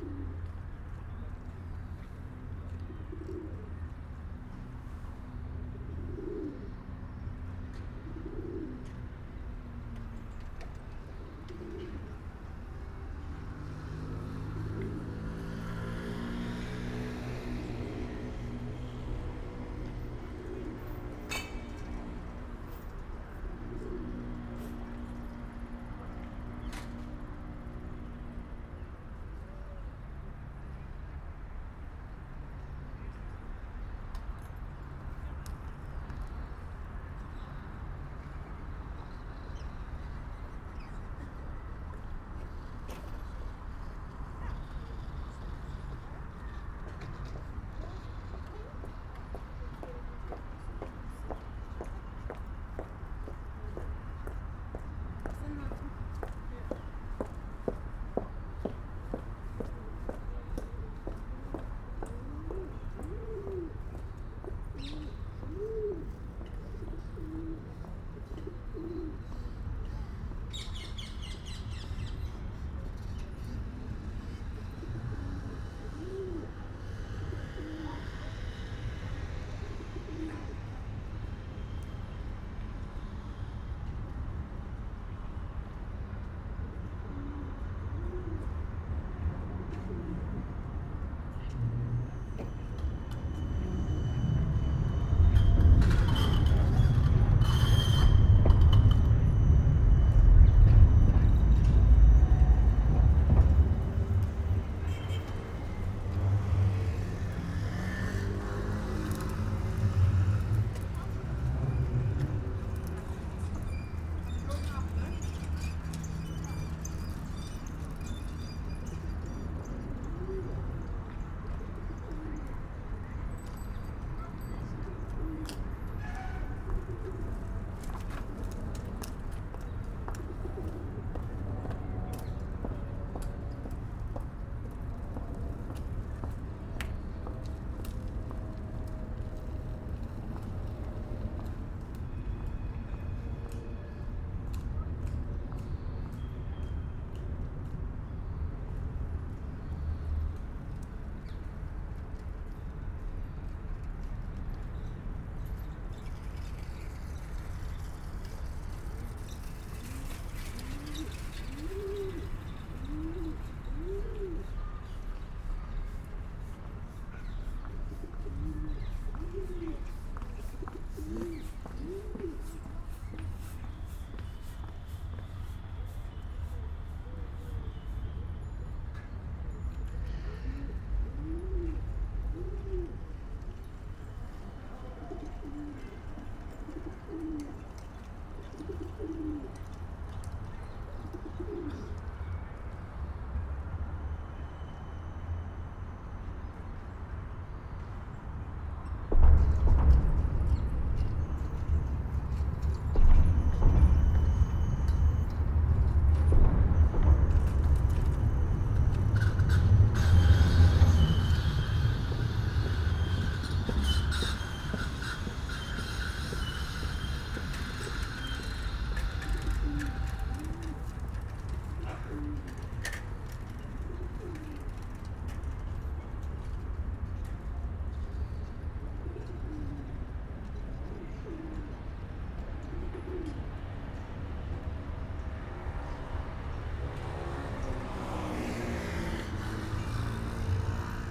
Plantage Middenlaan, Amsterdam, Netherlands - Pigeon cooing alongside the waterfront
A male pigeon cooing en courting a female, near the Hortusbrug in Amsterdam. Recorded in a Binaural format with two DPA 4061.
17 March 2018, 11:17